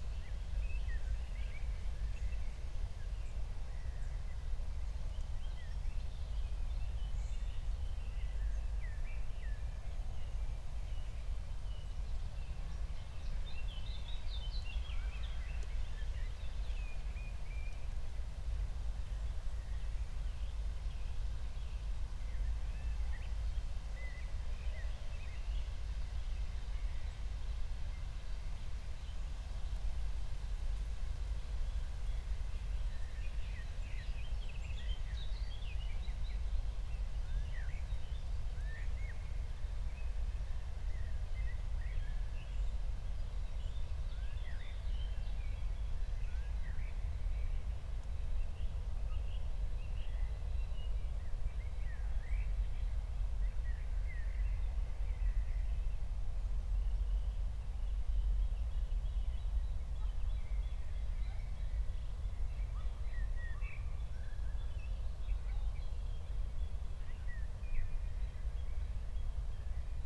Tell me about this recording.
17:00 Berlin, Buch, Mittelbruch / Torfstich 1